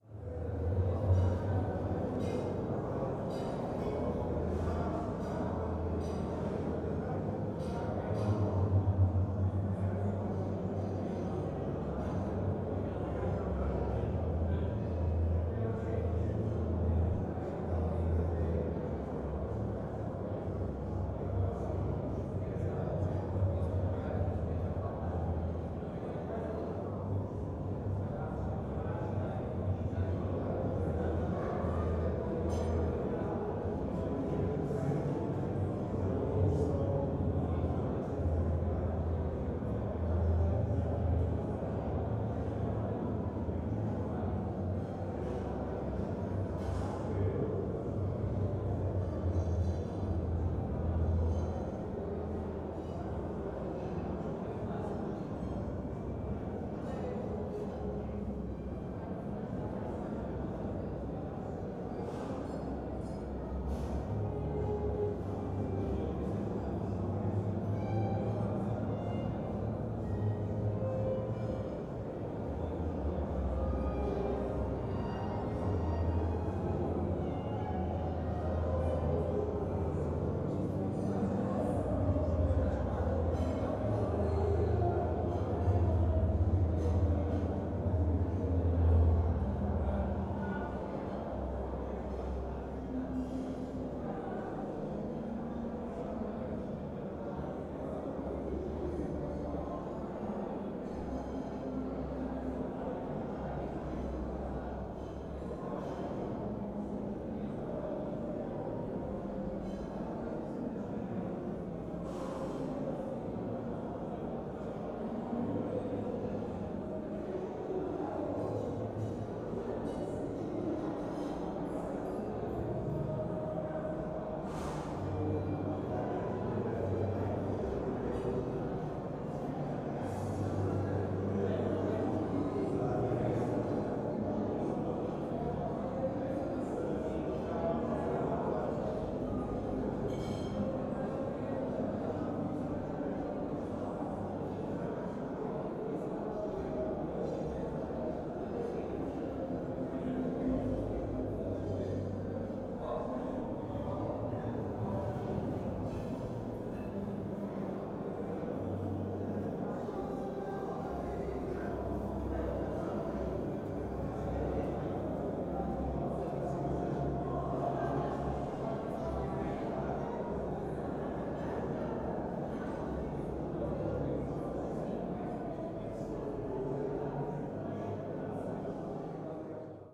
Porto, R. de Passos Manuel - Coliseu do Porto, stairway
Coliseu do Porto, stairway, 3rd etage, floor and part of the walls are covered with carpet, dampened sound of a dinner going on above